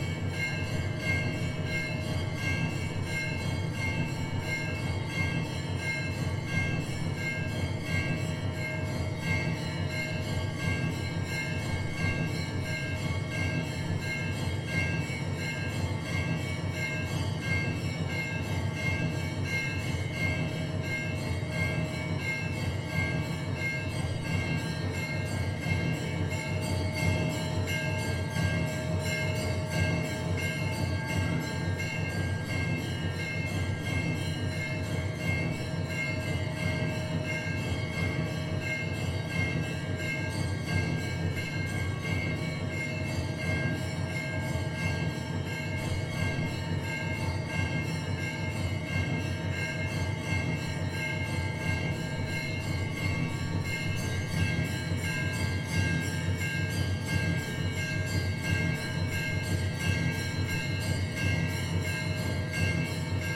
Kisutu, Dar es Salaam, Tanzania - Shree Santan Dharma Sabha / Evening Drum Ritual
In Tanzania there is a rather large population of people of Indian descent (although, as they explain, it is 'dwindling'). They built much of the city's inner neighbourhoods, in particular Kisutu which before independence (1961) used to be called 'Uhindini' –the Indian part, in Kiswahili. This recording was taken at the temple Shree Santan Dharma Sabha, which is located on Kistutu streets. After talking with some of the congregation members, and the chairman of the temple, we were allowed to stay for the evening session of drum listening –without much explanation. In the patio of the temple, there was a mechanical drum machine which started playing a repeating pattern for over 20 minutes. The bell heard was activated by a man.
18 October 2016